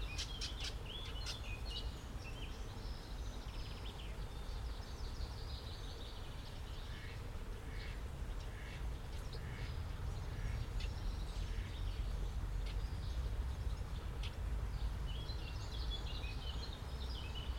Stadtpark in Graz, close to the Glacisstraße (B67)
morning recording, water supply system of pont, no people, far street noise, birds, ducks, doves
Steiermark, Österreich